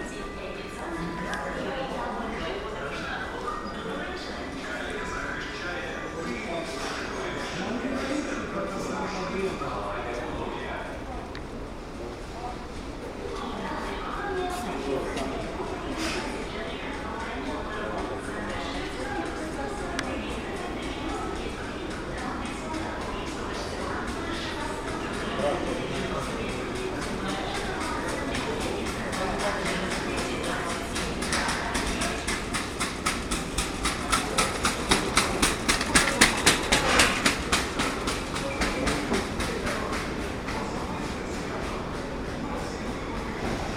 Epicentr, Zaporiz'ke Hwy, . Dnipro, Ukraine - Epicentr [Dnipro]